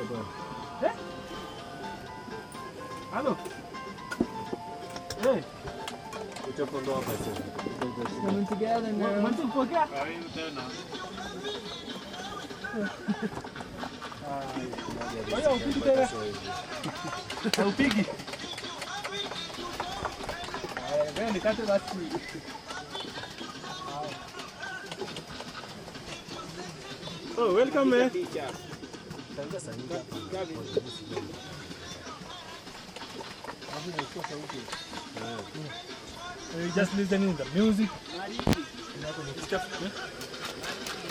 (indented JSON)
{
  "title": "Rombo, Tanzania - kitchen tent",
  "date": "2011-07-16 15:02:00",
  "description": "Porters on Mt Kilimanjaro cook all the food for the people who pay to climb the mountain. This recording starts outside the kitchen tent, then goes inside the tent, then leaves the tent.",
  "latitude": "-3.07",
  "longitude": "37.32",
  "altitude": "4533",
  "timezone": "Africa/Dar_es_Salaam"
}